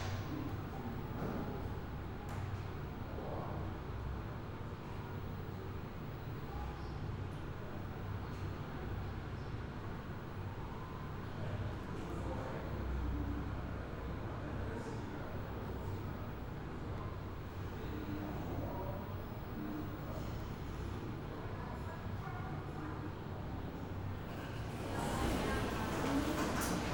city toilet ambience at alexanderplatz, berlin.